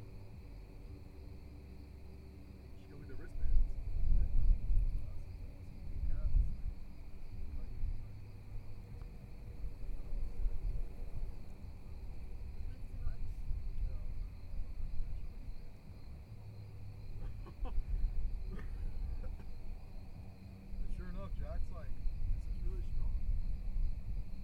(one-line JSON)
{"title": "The Pass", "date": "2019-10-28 05:57:00", "description": "A popular hang out spot for locals within the area.", "latitude": "34.47", "longitude": "-119.80", "altitude": "163", "timezone": "America/Los_Angeles"}